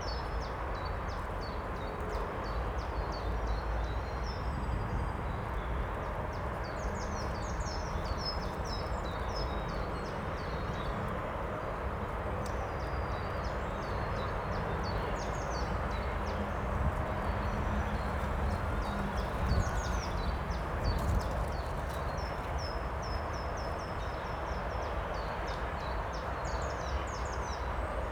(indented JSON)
{
  "title": "Braník woodland above the station, Nad Údolím, Praha, Czechia - Braník woodland above the station",
  "date": "2022-04-06 11:33:00",
  "description": "This track sound very noisy, but it is quite representative of what is heard here. All the roads, railways and tram lines running along the valley beside the river Vltava create the constant rushing aural background to the whole area where ever you are. This spot is in woodland, but just above the multiple transport systems. On this recording a nuthatch calls, trams squeal and the almost lost loudspeakers announce an incoming train to Braník station. It is windy. At the end a single train engine passes very close on the upper railtrack.",
  "latitude": "50.03",
  "longitude": "14.41",
  "altitude": "227",
  "timezone": "Europe/Prague"
}